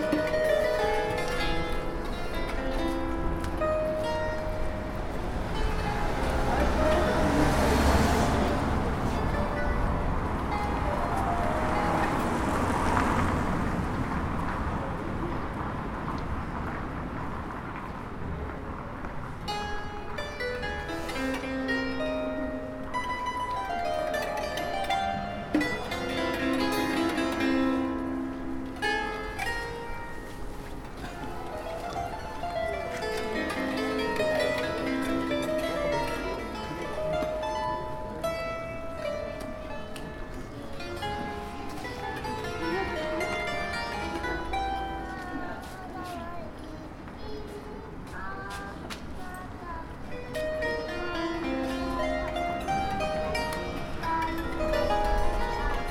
Кузнечный пер., Санкт-Петербург, Россия - Street musician